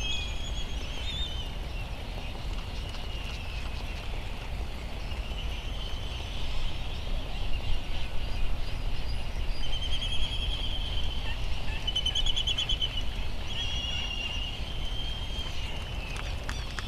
United States Minor Outlying Islands - Laysan albatross soundscape ...
Laysan albatross soundscape ... Sand Island ... Midway Atoll ... laysan albatross calls and bill clapperings ... bonin petrel and white tern calls ... open lavalier mics ... warm with slight breeze ...
13 March 2012, 05:39